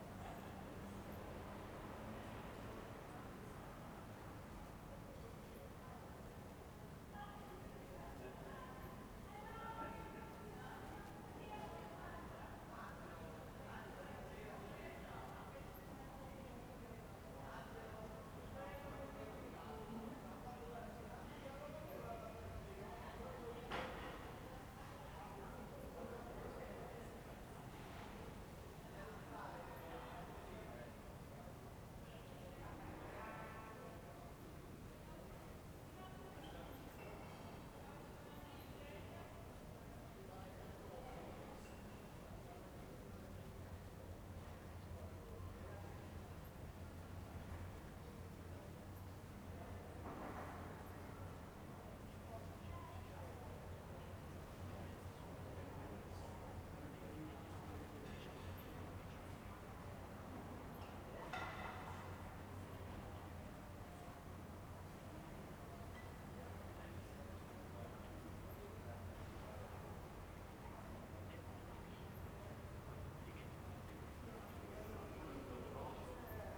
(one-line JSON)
{"date": "2021-04-30 21:25:00", "description": "\"Terrace one hour after sunset last April’s day walking around with radio in the time of COVID19\" Soundscape with radiowalk\nChapter CLXX of Ascolto il tuo cuore, città. I listen to your heart, city\nFriday, April 30th, 2021. Fixed position on an internal terrace at San Salvario district Turin, one year and fifty-one days after emergency disposition due to the epidemic of COVID19. I walked all-around at the beginning with my old National Panasonic transistor radio, scanning MW from top (16x100) to down (5.3x100) frequencies.\nOne year after a similar recording on the same date in 2020 (61-Terrace at sunset last April day).\nStart at 9:25 p.m. end at 9:58 p.m. duration of recording 33'33'', sunset time at 8:32 p.m.", "latitude": "45.06", "longitude": "7.69", "altitude": "245", "timezone": "Europe/Rome"}